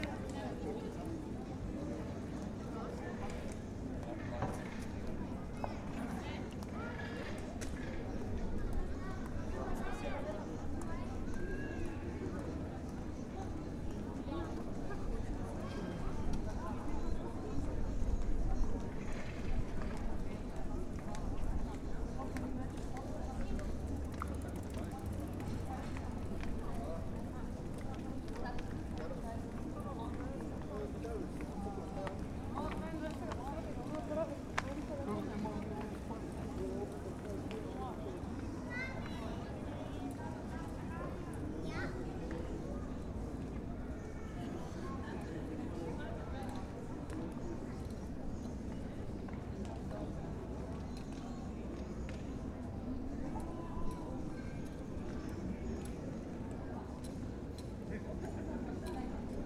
Grajski trg, Maribor, Slovenia - corners for one minute
one minute for this corner - grajski trg, hotel orel, entrance on the left side